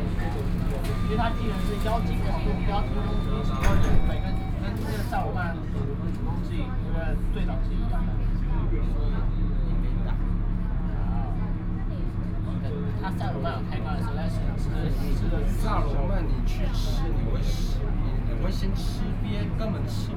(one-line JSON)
{"title": "Tamsui Line (Taipei Metro), Taipei City - Tamsui Line", "date": "2013-09-10 16:44:00", "description": "from Minquan West Road station to Shilin station, Sony PCM D50 + Soundman OKM II", "latitude": "25.09", "longitude": "121.53", "altitude": "10", "timezone": "Asia/Taipei"}